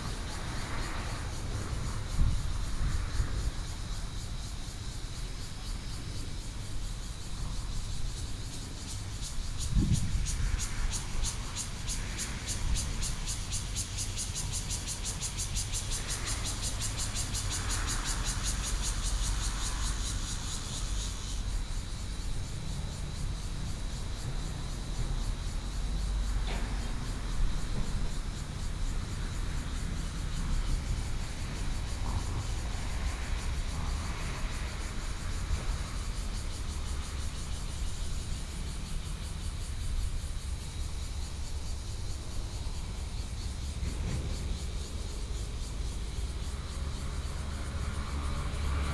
美崙海濱公園, Hualien City - In the roadside park
In the roadside park, Cicadas sound, Traffic Sound
Binaural recordings
27 August, 18:24